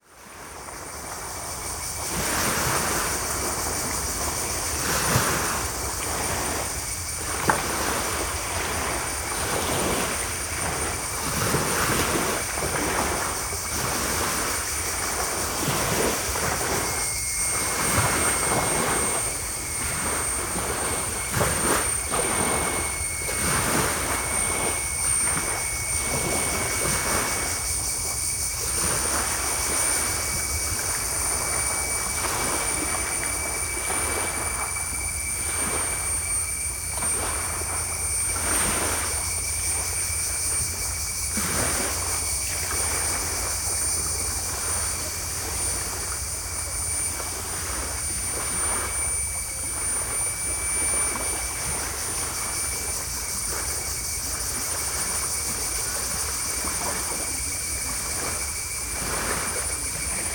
Koh Tong, Thailand - drone log 02/03/2013
cicadas, sea, distand boat
(zoom h2, binaural)
March 2013